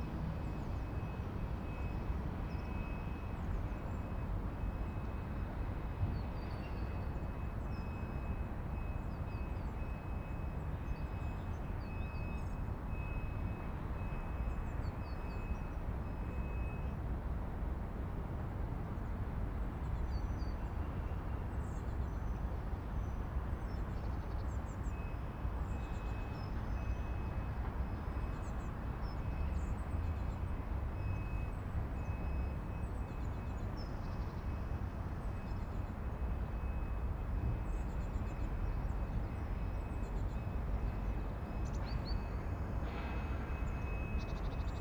Sint Barbara, Binckhorst, The Netherlands - weiland bij begraafplaats
meadow by graveyard. Birds. Distant trucks, machines, trains... Soundfield Mic (ORTF decode from Bformat) Binckhorst Mapping Project